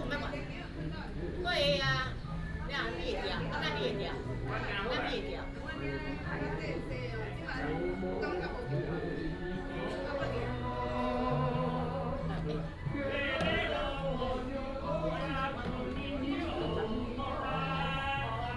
永康公園, Taoyuan City - learning to sing Japanese songs
A group of old people are learning to sing Japanese songs, in the Park, birds sound
Taoyuan District, Taoyuan City, Taiwan